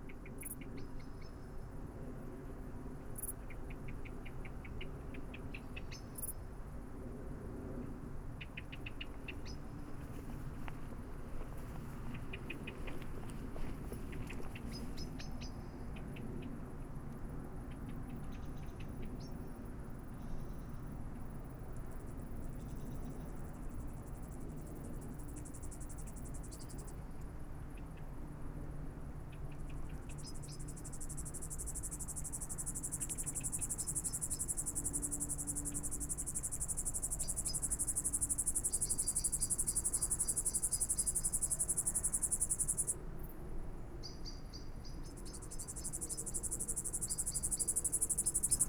Weimar, Germania - insects belvedere
(binaural recording, use headphones)